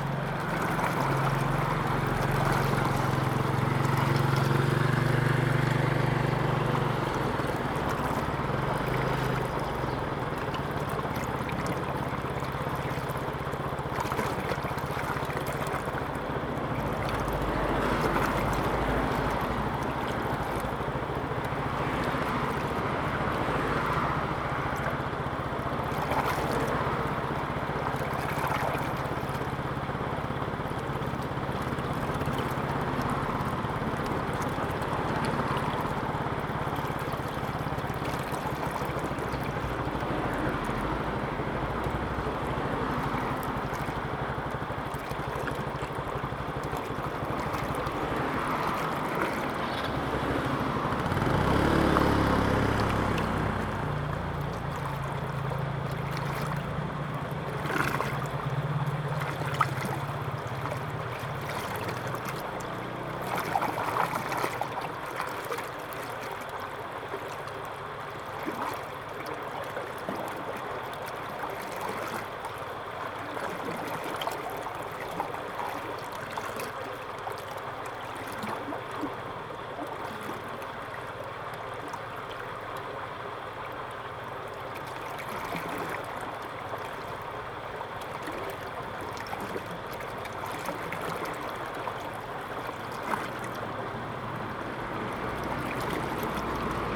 Irrigation waterway, Traffic Sound, Very hot weather
Zoom H2n MS+ XY
Fuli Township, Hualien County, Taiwan, 7 September 2014, 15:13